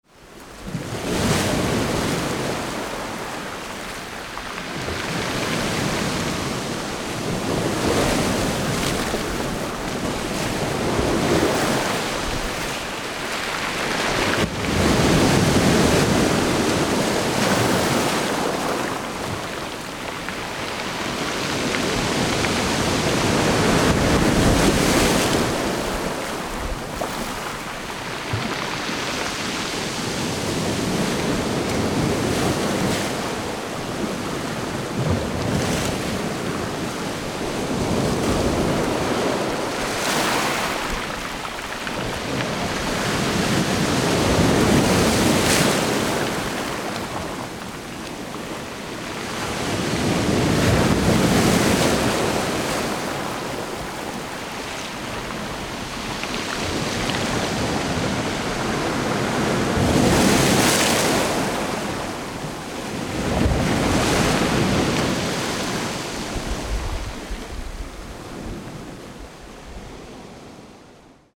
Jobourg, France - Plage Cobourg
Waves with soft stones, Zoom H6